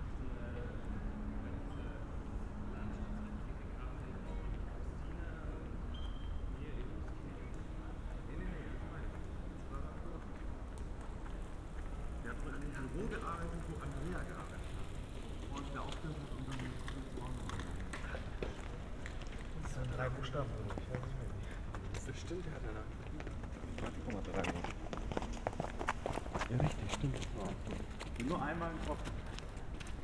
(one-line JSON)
{"title": "Berlin: Vermessungspunkt Friedel- / Pflügerstraße - Klangvermessung Kreuzkölln ::: 29.06.2012 ::: 02:21", "date": "2012-06-29 02:21:00", "latitude": "52.49", "longitude": "13.43", "altitude": "40", "timezone": "Europe/Berlin"}